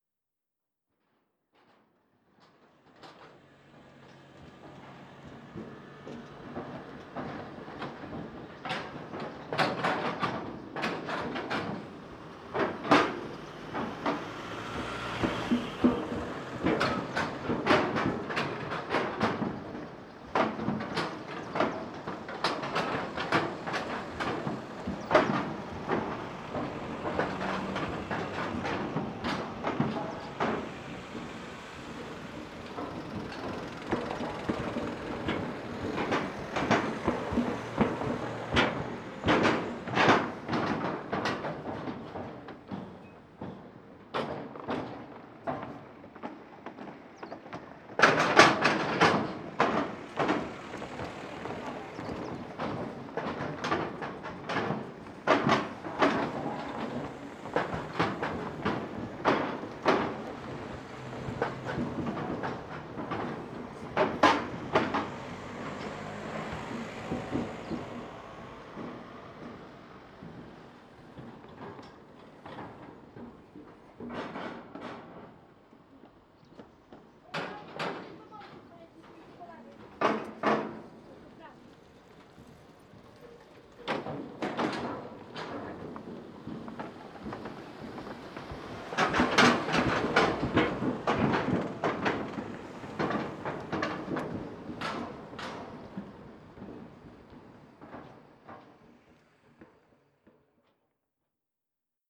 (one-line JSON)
{"title": "Gdańsk, Poland - Most / Bridge 2", "date": "2015-06-08 12:20:00", "description": "Most / Bridge 2 rec. Rafał Kołacki", "latitude": "54.34", "longitude": "18.83", "timezone": "Europe/Warsaw"}